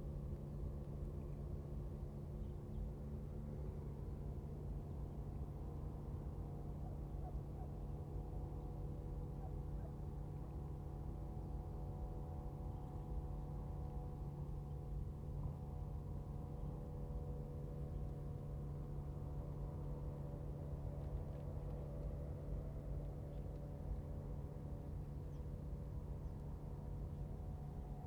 In the bank, Dogs barking, The distant sound of fishing vessels, Birds singing
Zoom H2n MS +XY
Husi Township, 澎13鄉道, 21 October 2014